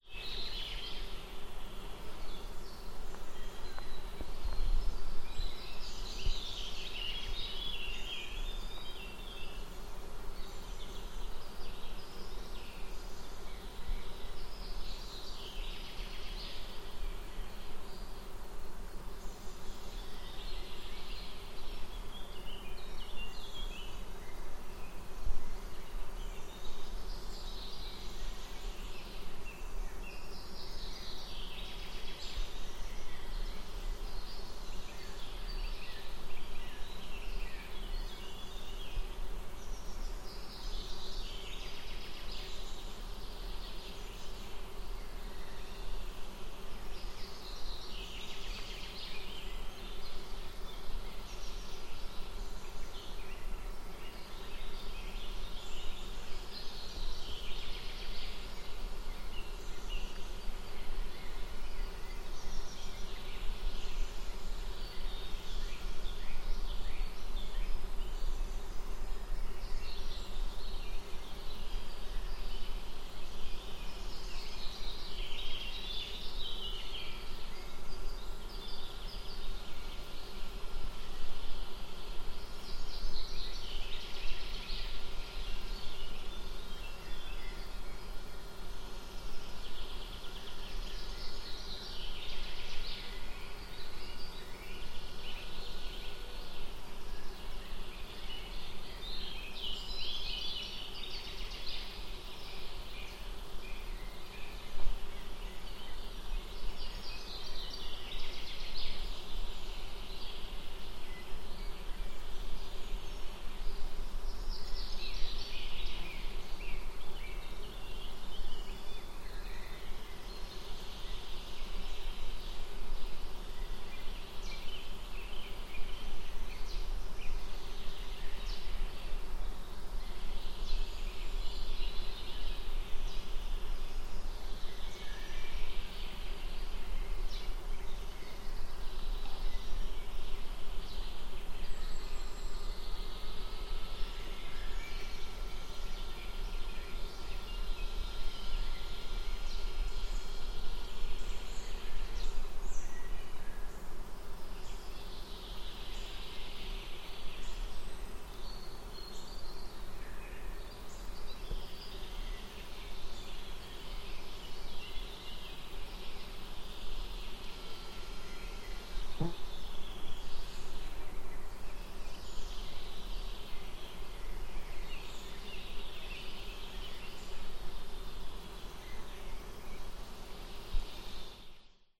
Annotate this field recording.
Comana is a natural reserve habitat 30 kms outside of Bucharest, Romania.